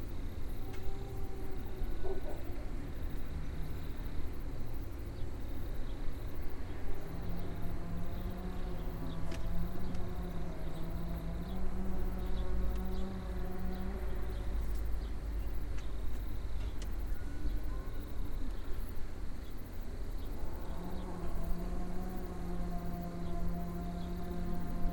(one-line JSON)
{"title": "Chevaline, France - Paysage de Chevaline", "date": "2022-08-16 17:40:00", "description": "Depuis la cabane n°3 Pré du trot au dessus de Chevaline. Festival des cabanes 2022. Interprétation improvisée en tant que xylophone. Non comprise dans ce son.", "latitude": "45.76", "longitude": "6.22", "altitude": "602", "timezone": "Europe/Paris"}